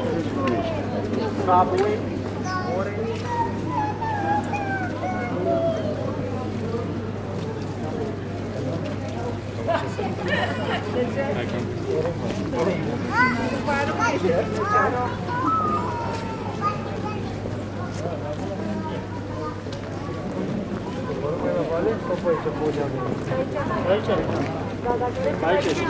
Strada Michael Weiss, Brașov, Romania - 2020 Christmas in Brasov, Transylvania, Crowded Main Street
A crowded main street on Christmas. In the distance a church bell rings for the hour. Recorded with Superlux S502 Stereo ORTF mic and a Zoom F8 recorder.
România, 25 December 2020, 16:10